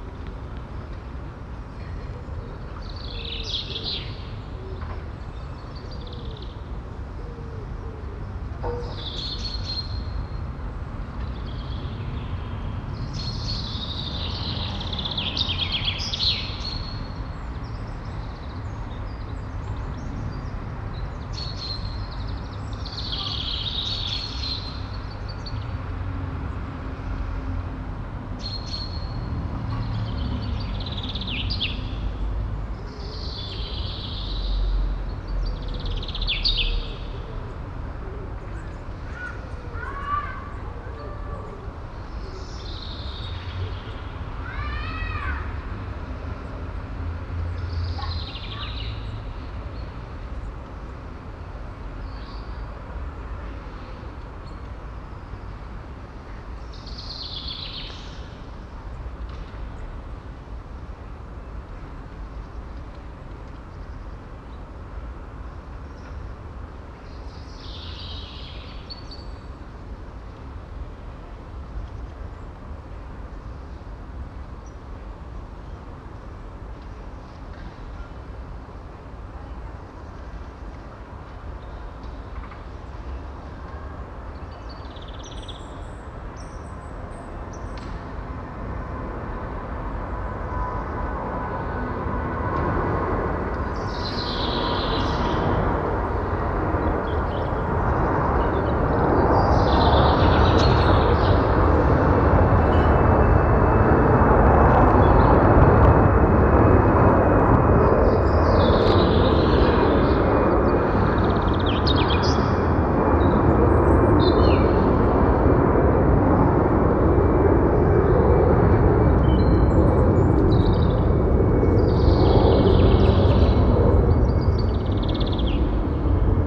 ratingen west, siedlungsinnenhof
atmo in einem innenhof des siedlungskomplexes ratingen west - 10min - viertelstündige flugzeugüberflüge
soundmap nrw:
social ambiences/ listen to the people - in & outdoor nearfield recordings
erfurter strasse, siedlungsinnenhof